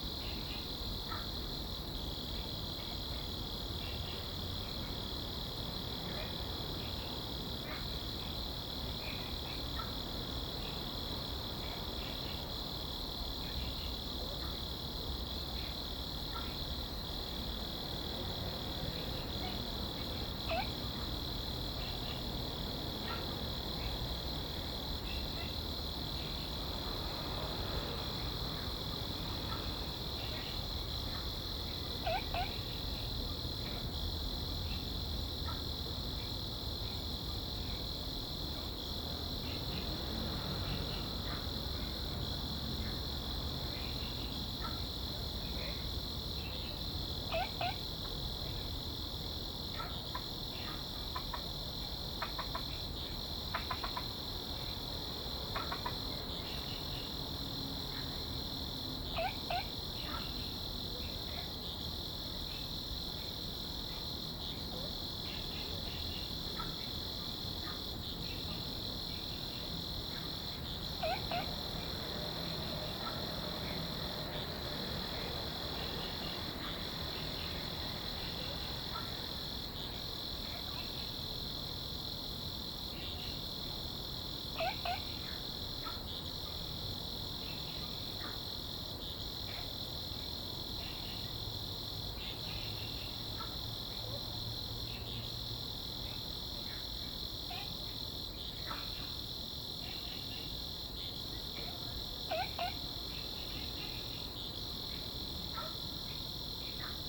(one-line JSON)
{"title": "福州山公園, Da'an District - Frog and insects sound", "date": "2015-07-05 20:04:00", "description": "In the park, Sound of insects, Frog sound\nZoom H2n MS+XY", "latitude": "25.02", "longitude": "121.55", "altitude": "22", "timezone": "Asia/Taipei"}